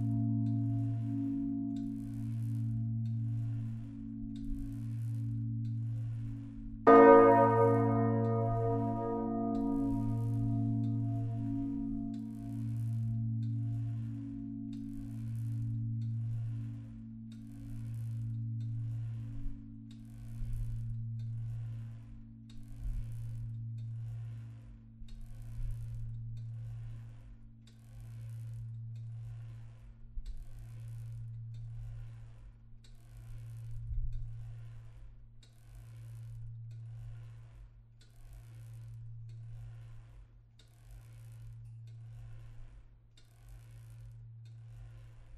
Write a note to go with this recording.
aufnahme im glockenturm miitags, glockenläuten der dicken märch (glockennmame), beginn mit kettenantrieb der glocke, - soundmap nrw, project: social ambiences/ listen to the people - in & outdoor nearfield recordings